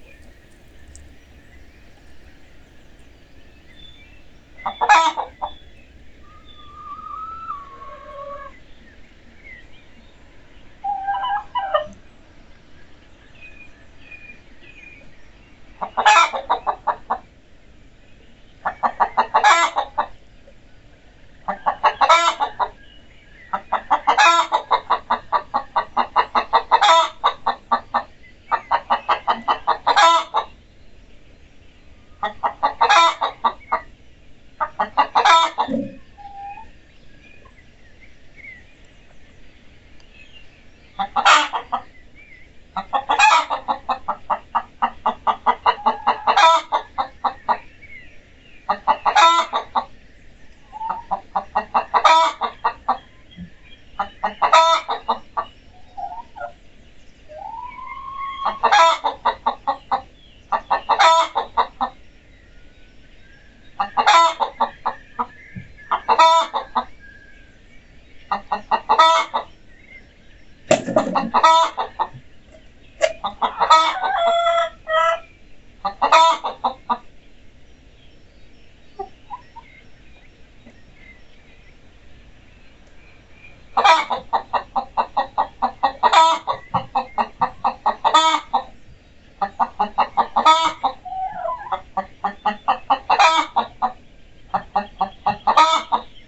Making Eggs - 39 Alston, UK - Fresh Eggs
These ladies where making fresh eggs
England, United Kingdom, 27 March